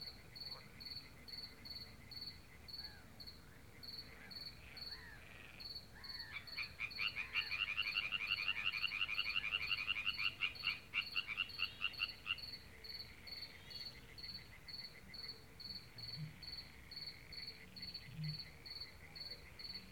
Sarnów, Poland - Sarnów Stawy ( binaural )
Evening tumult of water zoo.